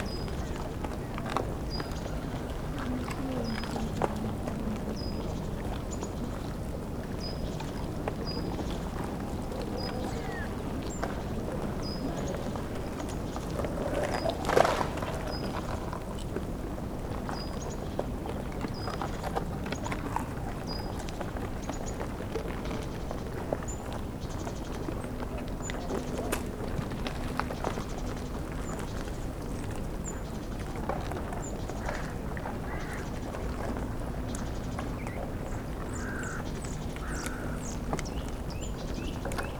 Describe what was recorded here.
cracking ice-sheets, voices of promenaders, the city, the country & me: february 12, 2012